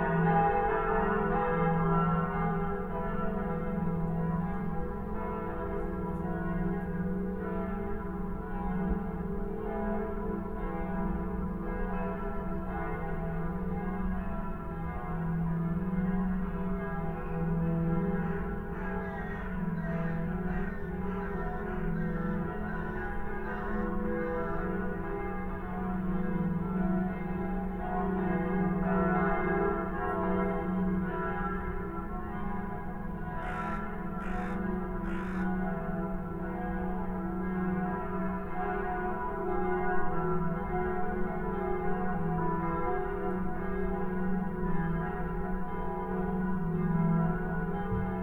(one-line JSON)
{"title": "Tura St, Jerusalem, Israel - Cave at Bloomfield park", "date": "2019-12-01 09:00:00", "description": "Cave at Bloomfield park\nChurch bells", "latitude": "31.77", "longitude": "35.22", "altitude": "769", "timezone": "Asia/Jerusalem"}